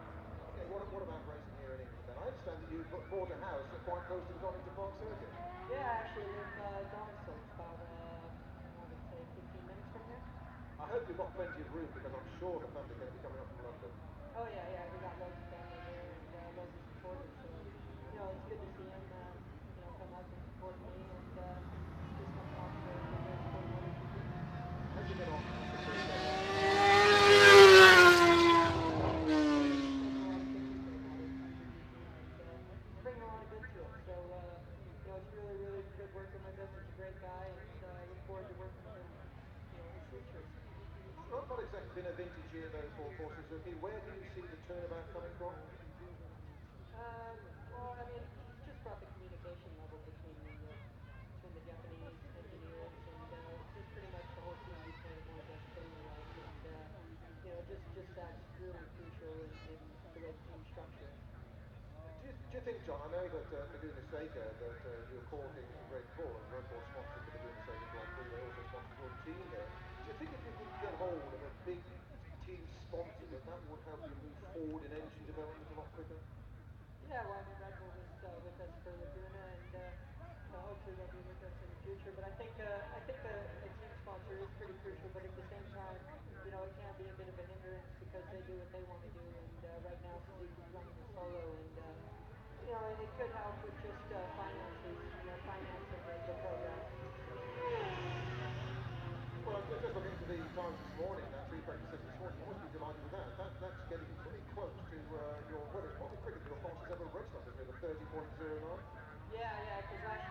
Unnamed Road, Derby, UK - British Motorcycle Grand Prix 2005 ... free practice two ...
British Motorcycle Grand Prix 2005 ... free practice two ... part one ... the 990cc era ... one point stereo mic to minidisk ...